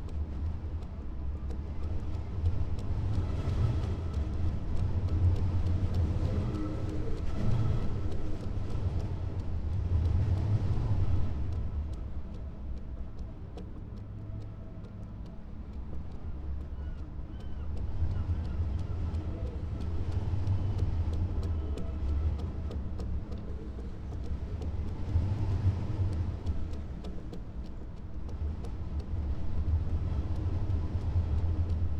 Crewe St, Seahouses, UK - flagpole and iron work in wind ...
flagpole lanyard and iron work in wind ... xlr sass to zoom h5 ... bird calls from ... starling ... jackdaw ... herring gull ... oystercatcher ... lesser black-backed gull ... unedited extended recording ...